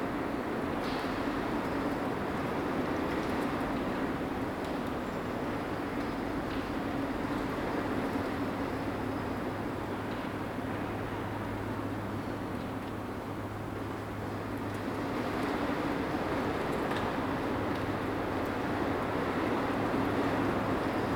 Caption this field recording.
inside an old swimming pool - wind (outside) SW 19 km/h, Cerro Sombrero was founded in 1958 as a residential and services centre for the national Petroleum Company (ENAP) in Tierra del Fuego.